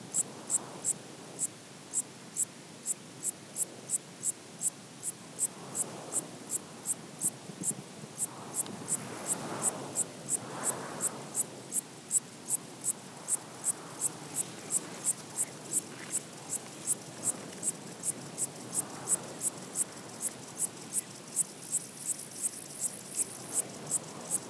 Kotayk, Arménie - Wind in the tall grass
Into a volcanoes mountains landscape, wind is powerfully blowing into the tall grass. A small locust is singing.
Armenia, 5 September